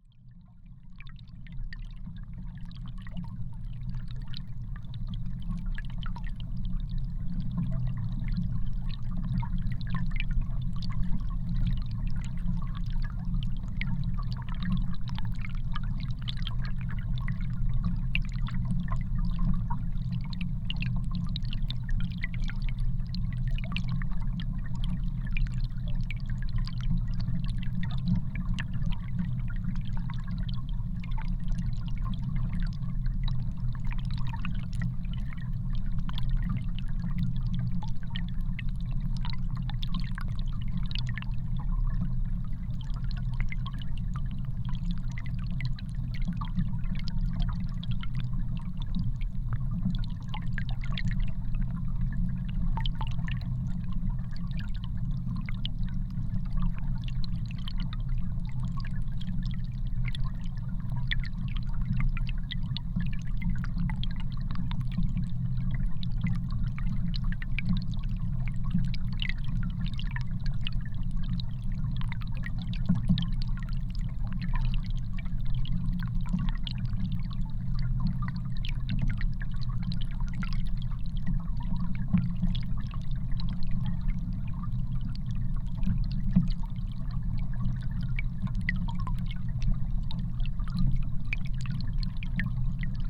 Pačkėnai, Lithuania, under bridge under water
multilayered recording. piece of concrete block with naked armature in the river. contact microphones on the armature. at the same time on the same place: hydrophone in the river.
Utenos apskritis, Lietuva, 29 November 2019, ~12:00